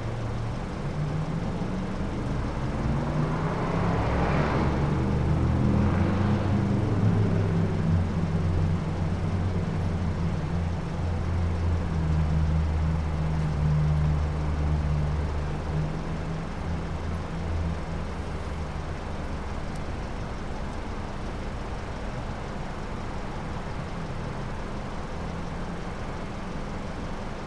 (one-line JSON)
{"title": "Lady's Island Drive, Beaufort, SC, USA - Supermarket Parking Lot", "date": "2021-12-26 17:45:00", "description": "The parking lot of a Publix supermarket. Most of the sounds heard are traffic-related, although there are some other sounds as well.", "latitude": "32.41", "longitude": "-80.65", "altitude": "14", "timezone": "America/New_York"}